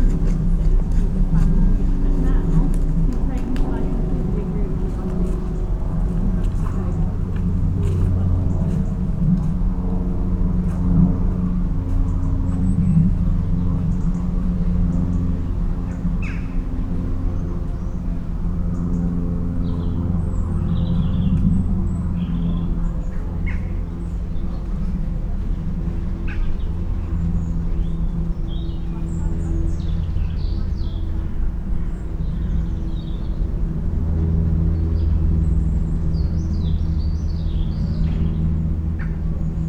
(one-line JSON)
{"title": "Bells in The Walled Garden, Ledbury, Herefordshire, UK - Bells in The Walled Garden", "date": "2021-10-14 12:00:00", "description": "It is windy in the large walled garden next to the church. To the right a mother sits talking on the phone. Her baby cries. She leaves passing the mics. A plane flies over. Noon comes and the bells sound.", "latitude": "52.04", "longitude": "-2.42", "altitude": "81", "timezone": "Europe/London"}